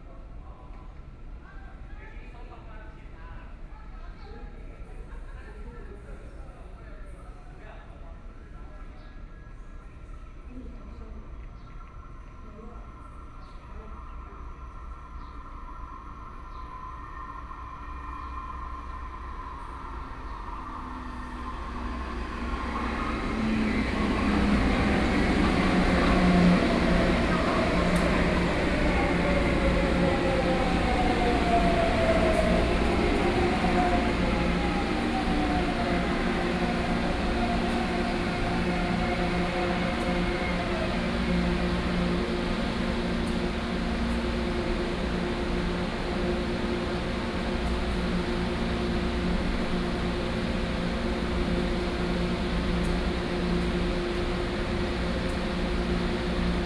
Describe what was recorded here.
In the MRT station platform, Waiting for the train, Binaural recordings, ( Proposal to turn up the volume ), Sony PCM D50+ Soundman OKM II